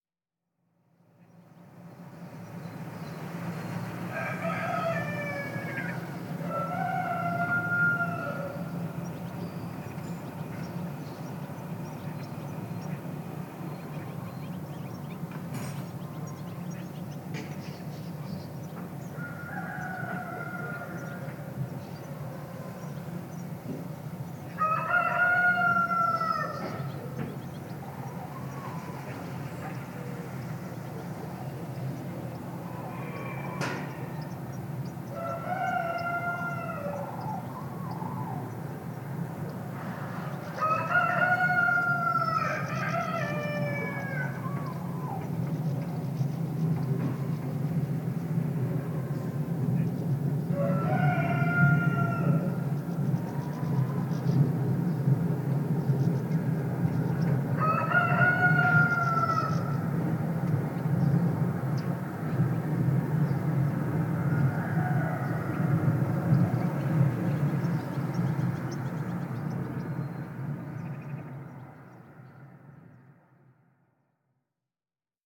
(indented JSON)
{
  "title": "Pod Lipą, Borsuki, Poland - (835d AB) Roosters in the morning",
  "date": "2021-08-21 07:20:00",
  "description": "Stereo recording of roosters calling in the morning.\nRecorded in AB stereo (17cm wide) with Sennheiser MKH8020 on Sound Devices MixPre6-II",
  "latitude": "52.28",
  "longitude": "23.10",
  "altitude": "129",
  "timezone": "Europe/Warsaw"
}